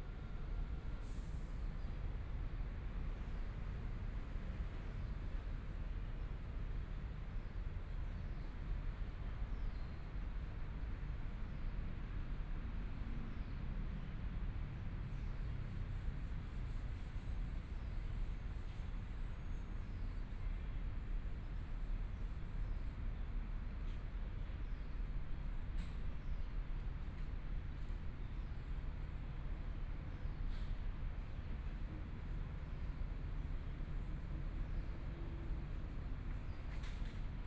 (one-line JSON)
{"title": "康樂里, Zhongshan District - Sitting in the park", "date": "2014-02-06 14:02:00", "description": "Sitting in the park, Environmental sounds, Construction noise, Traffic Sound, Binaural recordings, Zoom H4n+ Soundman OKM II", "latitude": "25.05", "longitude": "121.52", "timezone": "Asia/Taipei"}